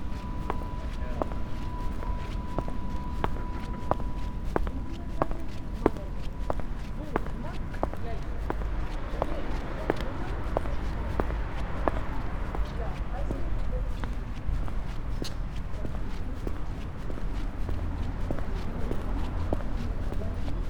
Oberwallstraße, Berlin, Germany - night, walking
Sonopoetic paths Berlin